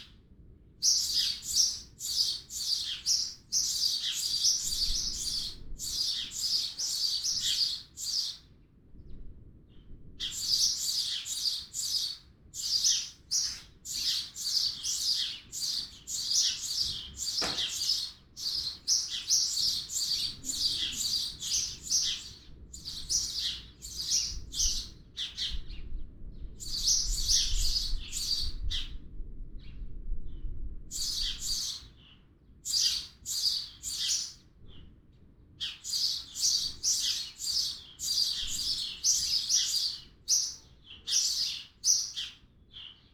Giassico GO, Italia - Casa Riz
FVG, Italia, 5 April 2013, 18:57